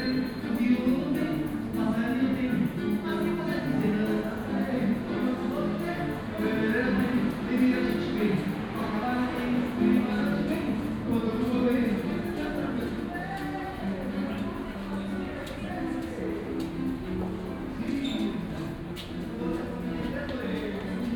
Möckernbrücke, Berlin - crossing the station
part of a soundwalk from neukölln to kreuzberg, station Möckernbrücke, musicians, passengers, steps, leaving the station at the south side, Tempelhofer Ufer